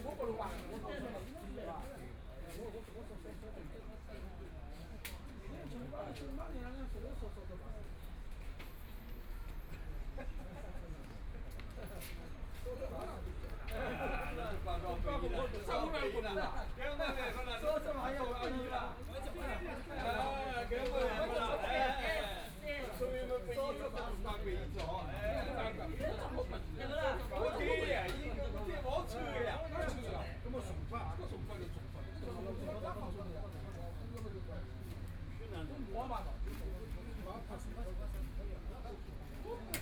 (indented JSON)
{
  "title": "Penglai Park, Shanghai - Playing cards",
  "date": "2013-11-27 12:50:00",
  "description": "Very cold weather, Many people hide behind the wall cards, Binaural recording, Zoom H6+ Soundman OKM II",
  "latitude": "31.21",
  "longitude": "121.49",
  "altitude": "8",
  "timezone": "Asia/Shanghai"
}